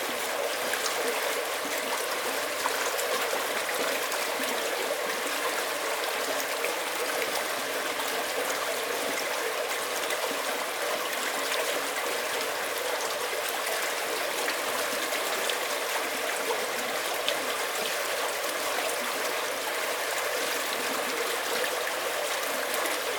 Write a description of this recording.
Recorded inside a concrete pipe under Park Rd 1C (between Bastrop and Buescher State Parks) with Alum Creek passing underway. Equipment: Marantz PMD661 & a stereo pair of DPA 4060's